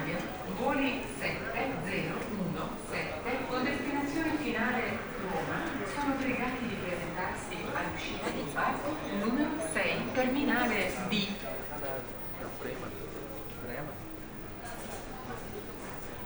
Soundscape of the Moscow Aeroflot airport Sheremetyevo. This field recording lets you travel freely in the airport, listening to the special sounds you can hear in this kind of place. Recorded without interruption on September 15, 2018, 14h15 to 15h15. Walking from the A terminal to the F terminal.